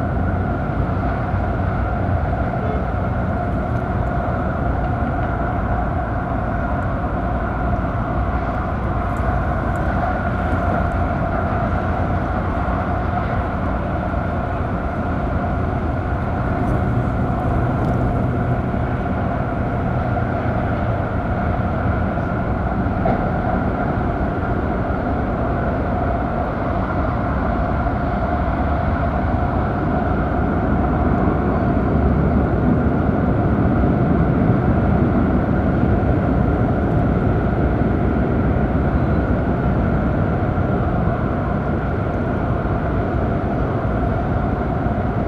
Maribor, Slovenia - one square meter: holes in the wall
holes is the concrete wall that forms one border of our recording space add their own resonance to the soundscape. all recordings on this spot were made within a few square meters' radius.